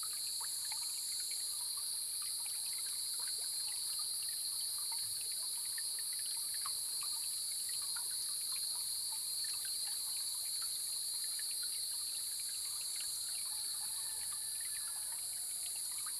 the sound of water droplets, Cicadas sound
Zoom H2n